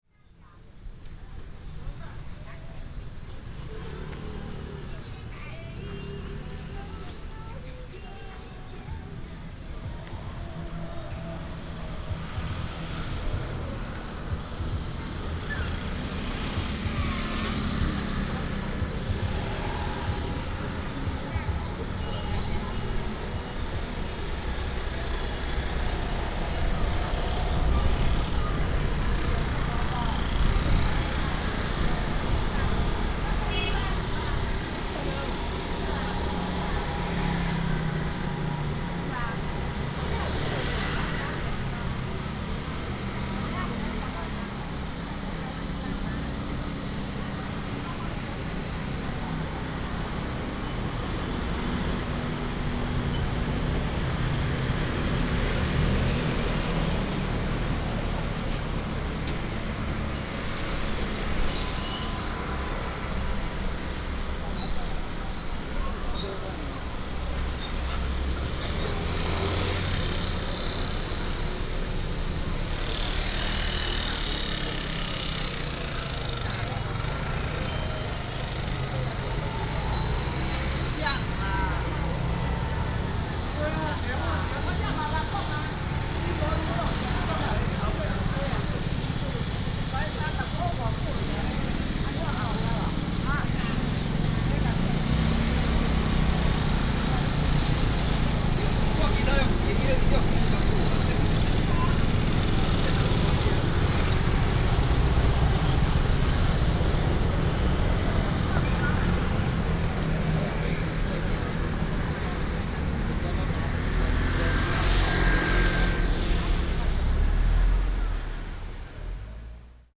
walking in the Street, Traffic sound, Marketplace
East District, Hsinchu City, Taiwan, 16 January 2017, ~09:00